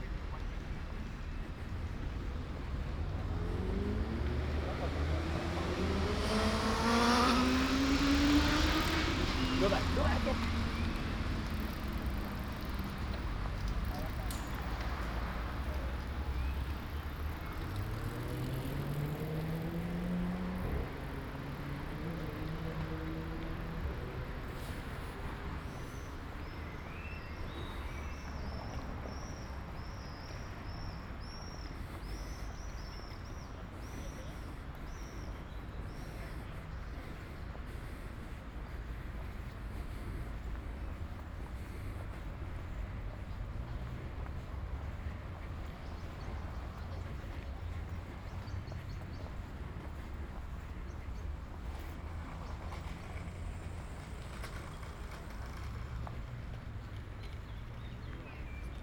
"Coucher de soleil au parc Valentino, rive droite du Pô, deux mois après, aux temps du COVID19": soundwalk
Chapter LXXXI of Ascolto il tuo cuore, città. I listen to your heart, city
Tuesday, May 19th 2020. San Salvario district Turin, to Valentino, walking on the right side of Po river and back, two months after I made the same path (March 19), seventy days after (but day sixteen of Phase II and day 2 of Phase IIB) of emergency disposition due to the epidemic of COVID19.
Start at 8:36 p.m. end at 9:25 p.m. duration of recording 48’41”. Local sunset time 08:55 p.m.
The entire path is associated with a synchronized GPS track recorded in the (kmz, kml, gpx) files downloadable here:
Torino, Piemonte, Italia